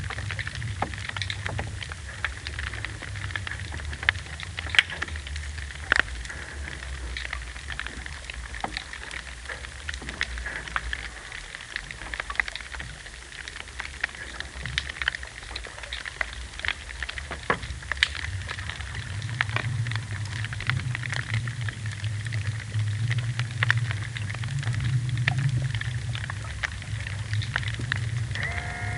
Muck, Small Isles, Scotland - Above, Submerged and Within Muck Bay (aerial & hydrophone)
3-channel recording with a Sound Devices MixPre-3, a mono Aquarian Audio h2a hydrophone and a stereo pair of DPA 4060s